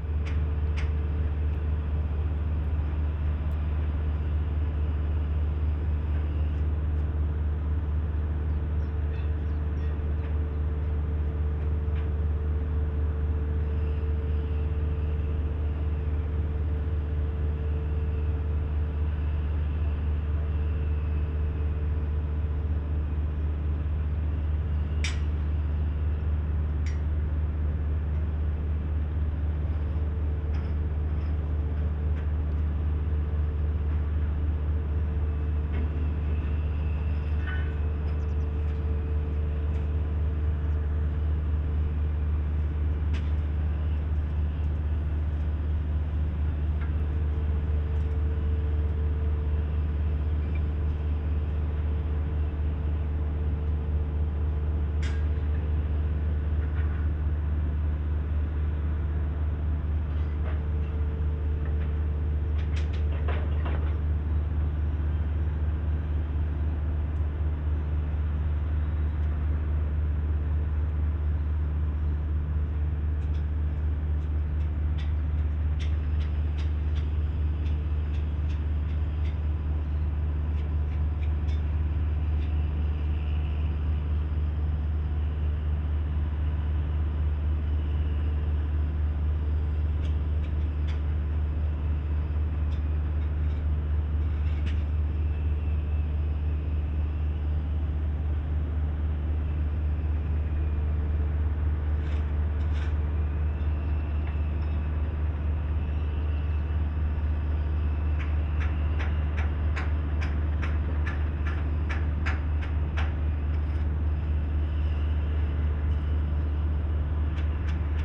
soundscape at the edge of the coal mining, drones from a distant huge bucket-wheel excavator at work.
Erkelenz, Germany